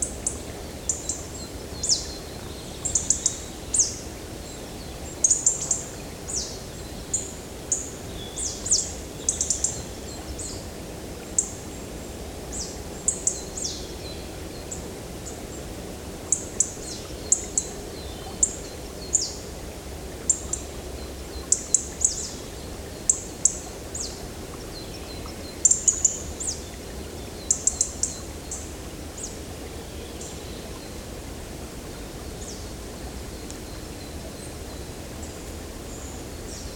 Unnamed Road, Champsecret, France - Quiet Andaine forest
Peaceful place into the heart of the forest.
ORTF
DR 100 MK3
LOM Usi Pro.